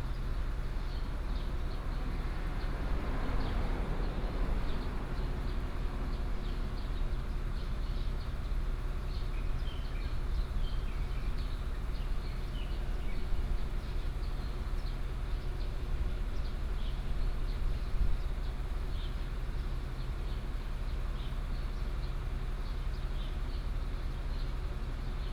Dongcheng Rd., Dongshan Township - Under the railway track
Under the railway track, Traffic Sound, Birdsong sound, Trains traveling through, Hot weather
Yilan County, Taiwan